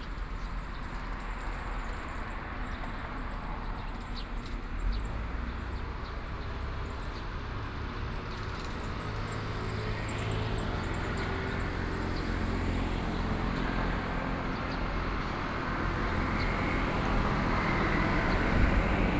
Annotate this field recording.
At the intersection, Traffic Sound, Birds singing